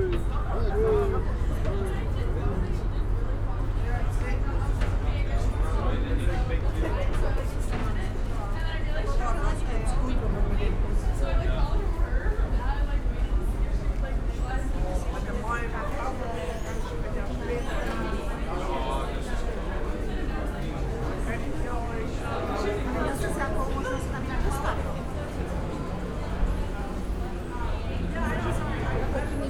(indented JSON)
{
  "title": "ferry terminal, Mgarr, Gozo, Malta - arrival at the terminal",
  "date": "2017-04-04 13:15:00",
  "description": "arrival at the ferry terminal, passage ambience, Mgarr, Gozo\n(SD702, DPA4060)",
  "latitude": "36.02",
  "longitude": "14.30",
  "altitude": "4",
  "timezone": "Europe/Malta"
}